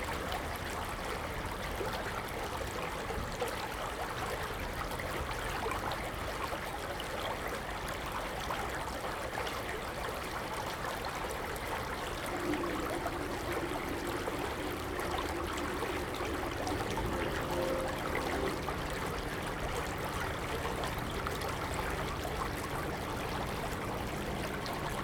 吉安溪, Ji'an Township - Stream
Stream, Drainage channel, Traffic Sound
Zoom H2n MS+XY